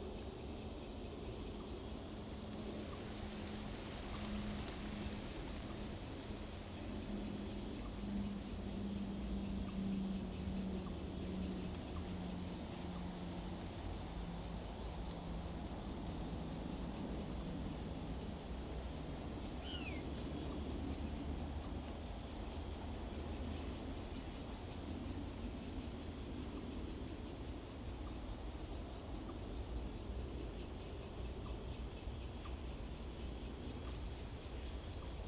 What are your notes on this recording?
In the woods, Sound of the Birds, Old-growth forest, Cicada sound, Zoom H2n MS+XY